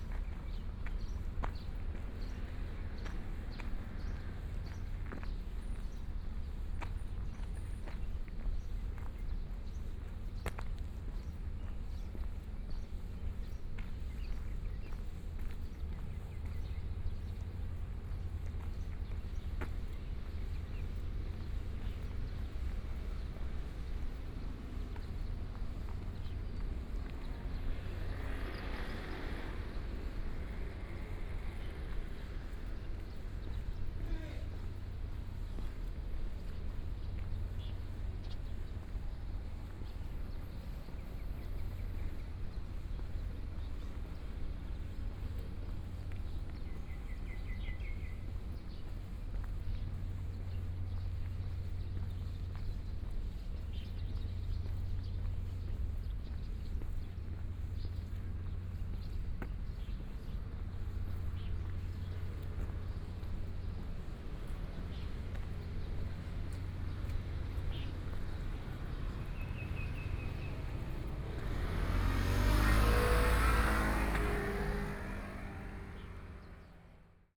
鹽埕區南端里, Kaohsiung City - walking
Walking through the park and parking, Birds singing, Morning park
Yancheng District, Kaohsiung City, Taiwan, 14 May 2014, 06:21